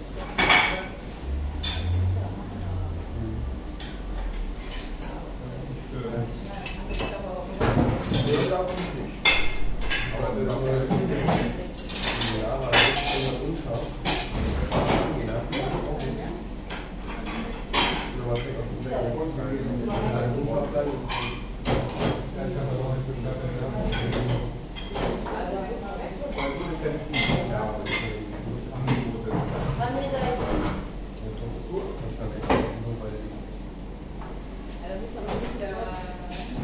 soundmap: köln/ nrw
mittagszeit in der gastronomie zikade, geräusche von geschirr, besteck, gesprächsfetzen, hintergrundmusik
project: social ambiences/ listen to the people - in & outdoor nearfield recordings
kurfuerstenstr, zikade, June 2008